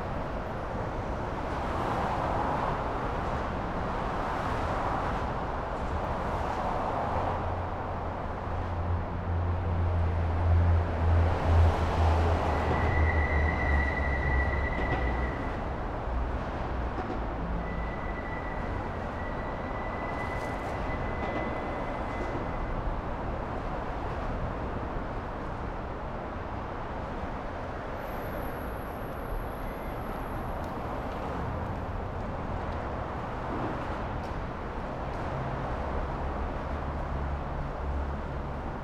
Osaka, Kyutaromachi district - flyover rumble
swooshes and rumble of cars, truck and trains passing on a flyover. recorded under the structure. seems like it's fast forward.
31 March 2013, 15:01